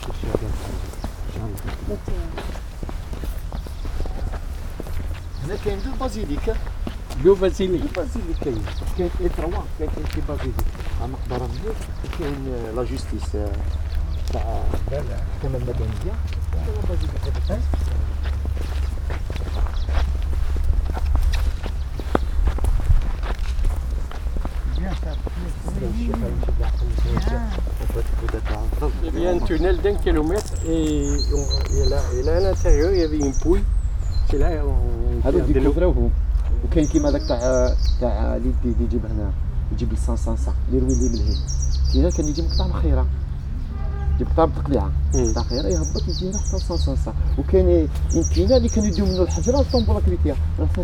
Tipaza, Algeria, Roman ruins.
Les ruines romaines de Tipasa.
Algeria, 20 March 2011, 14:50